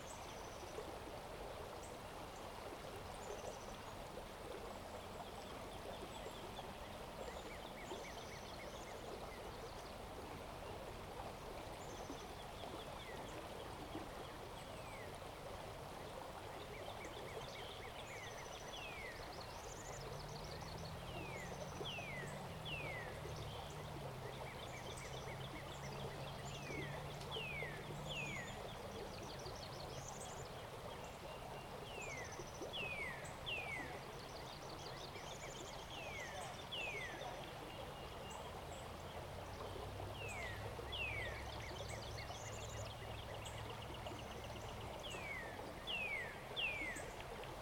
{"title": "Kiefer Creek Bend, Ballwin, Missouri, USA - Kiefer Creek Bend", "date": "2021-04-15 17:30:00", "description": "Evening recording at a bend in Kiefer Creek.", "latitude": "38.55", "longitude": "-90.54", "altitude": "132", "timezone": "America/Chicago"}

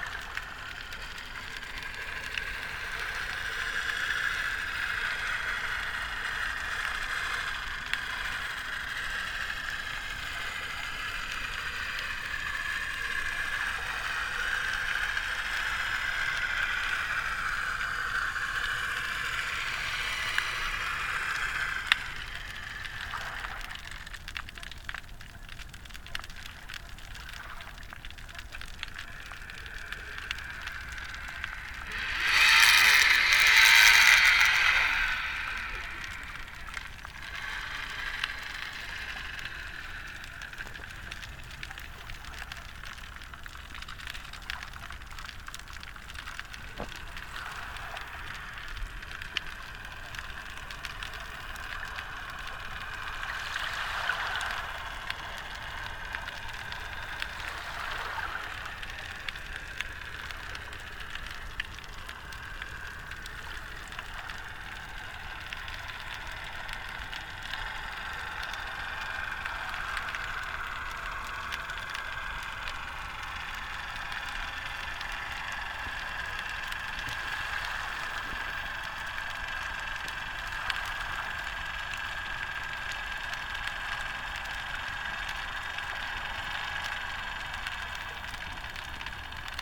{"title": "Platania, Crete, listening to the distant motor boat", "date": "2019-04-28 10:30:00", "description": "hydrophone: listening to the distant motor boat", "latitude": "35.52", "longitude": "23.92", "altitude": "1", "timezone": "Europe/Athens"}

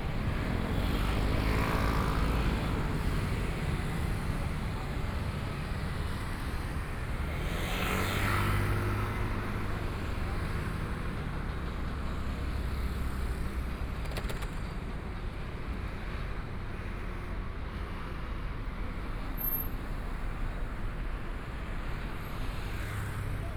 {"title": "Xinsheng Rd., Taitung City - walking in the street", "date": "2014-01-16 17:09:00", "description": "Walking on the street, Traffic Sound, Binaural recordings, Zoom H4n+ Soundman OKM II ( SoundMap2014016 -19)", "latitude": "22.75", "longitude": "121.15", "timezone": "Asia/Taipei"}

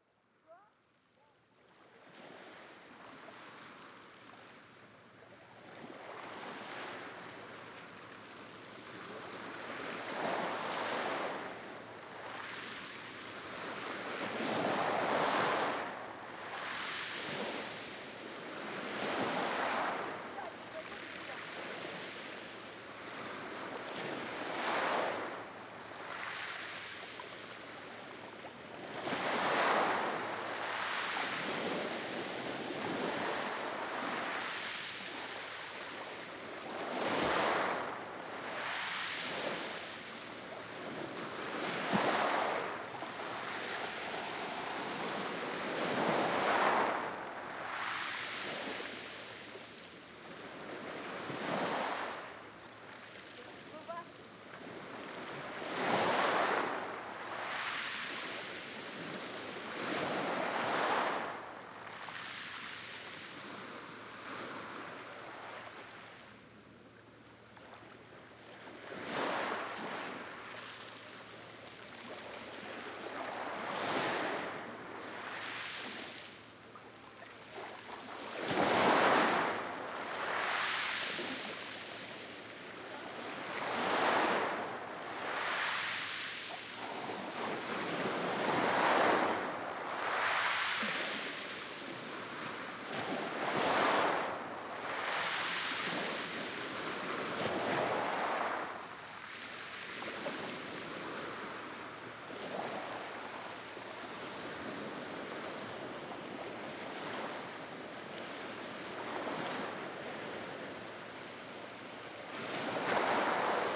{"title": "Cap-negret, Altea, Alicante, Espagne - Altea - Espagne Plage de Cap Negret", "date": "2022-07-21 11:00:00", "description": "Altea - Province d'Alicante - Espagne\nPlage de Cap Negret\nAmbiance 2 - vagues sur les galets\nZOOM F3 + AKG 451B", "latitude": "38.61", "longitude": "-0.03", "altitude": "9", "timezone": "Europe/Madrid"}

